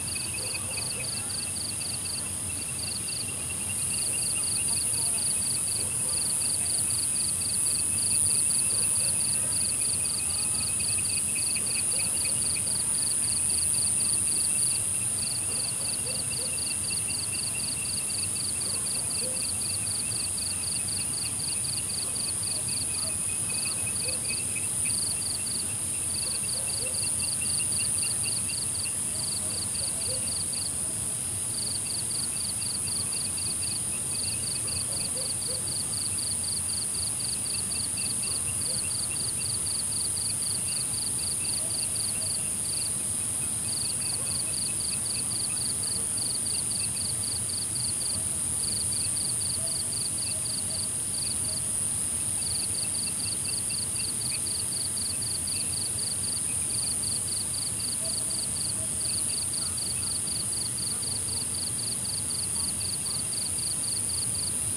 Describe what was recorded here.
Sound of insects, Frogs chirping, Dog chirping, Zoom H2n MS+XY